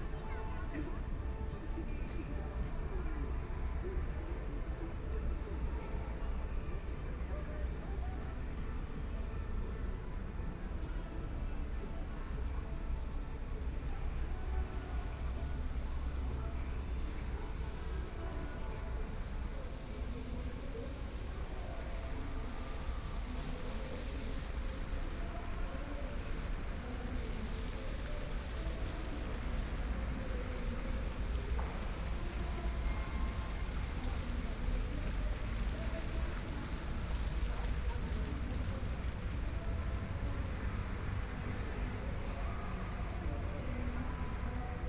{
  "title": "中山區金泰里, Taipei City - Walking along the outside of shopping malls",
  "date": "2014-02-16 19:00:00",
  "description": "Traffic Sound, Walking along the outside of shopping malls\nPlease turn up the volume\nBinaural recordings, Zoom H4n+ Soundman OKM II",
  "latitude": "25.08",
  "longitude": "121.56",
  "timezone": "Asia/Taipei"
}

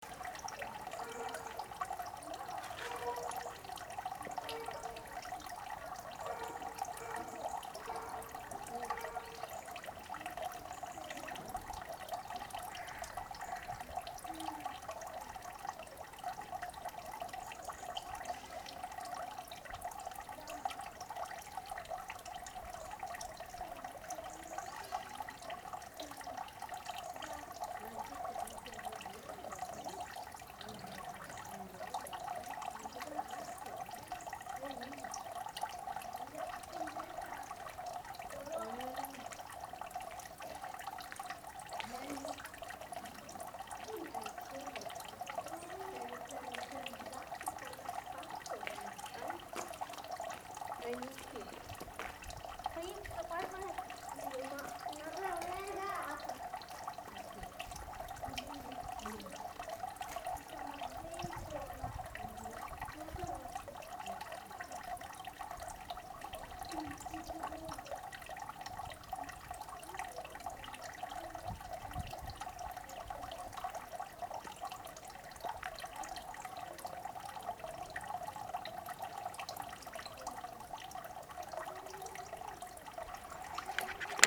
{
  "title": "Different perspectives III - Torre D'Arese, Italy - life in the village - III",
  "date": "2012-11-03 15:25:00",
  "description": "a fountain, mother taking kid in hand (taking him to perspective II D). other previous perspectives are very, very far away",
  "latitude": "45.24",
  "longitude": "9.32",
  "altitude": "79",
  "timezone": "Europe/Rome"
}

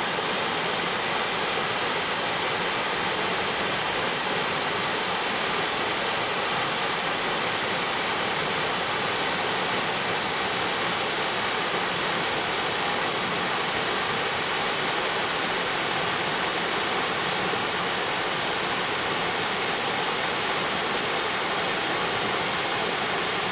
{
  "title": "眉溪, 埔里鎮蜈蚣里 - Stream and waterfall",
  "date": "2016-12-13 13:06:00",
  "description": "stream, waterfall\nZoom H2n MS+ XY",
  "latitude": "23.99",
  "longitude": "121.03",
  "altitude": "577",
  "timezone": "GMT+1"
}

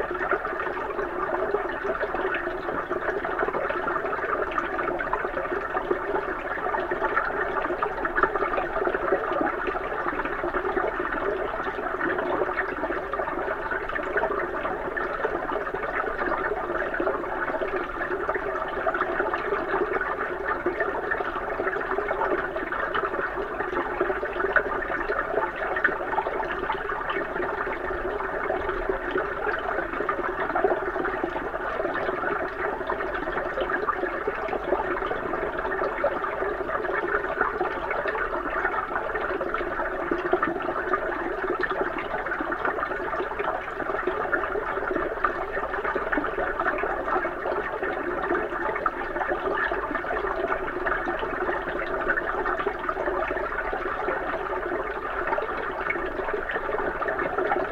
{
  "title": "Stalos, Crete, gotel pool underwater",
  "date": "2019-05-04 21:50:00",
  "description": "hydrophone in the hotel pool",
  "latitude": "35.51",
  "longitude": "23.95",
  "altitude": "9",
  "timezone": "Europe/Athens"
}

